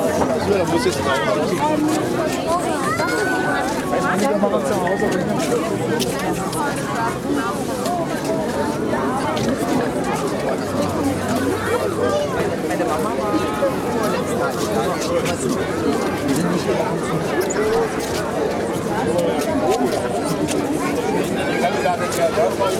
Grünstraße, Berlin, Deutschland - Weihnachtsmarkt Christmas market Köpenick
Olympus LS 5, Sunday
15 December 2019